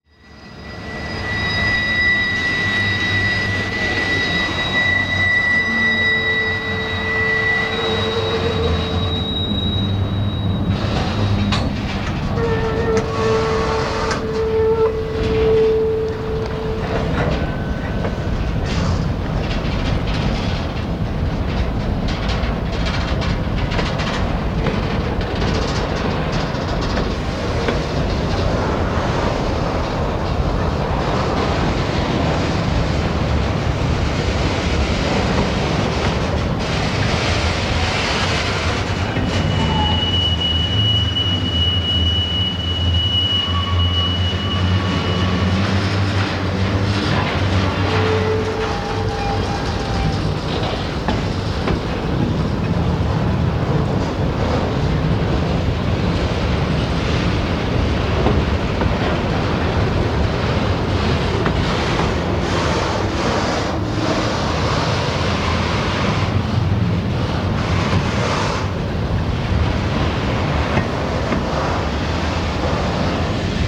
Burnet Rd, Austin, TX, USA - Freight Train
slowly passing freight train with empty bulk containers
Aiwa HS-JS315 Cassette Recorder